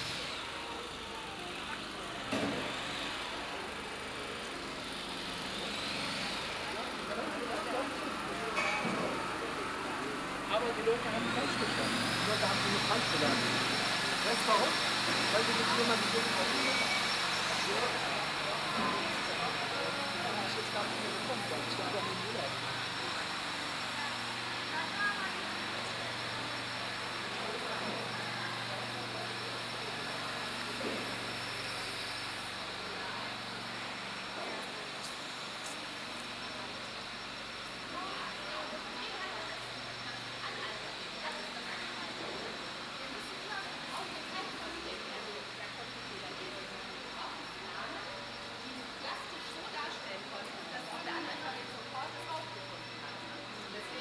Hühnermarkt, Frankfurt am Main, Deutschland - 14th of August 2018 Teil 5
Fifth and last part of the recording on the 14th of August 2018 in the new 'old town' that is supposed to be opened in late September. Already a lot of guides are leading through this new area, explaining buildings and constructions. The bells of the catholic church are calling for the evening mass. The fountain of the Hühnermarkt is audible. Several voices from visitors. Some motifs are repeated: the little chapel, that is already mentioned in the first part, the character of the 'old town', the barber shop is again audible....